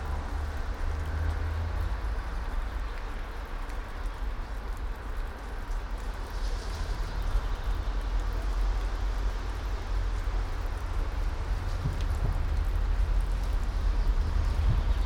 all the mornings of the ... - feb 27 2013 wed
Maribor, Slovenia, 27 February 2013, 10:56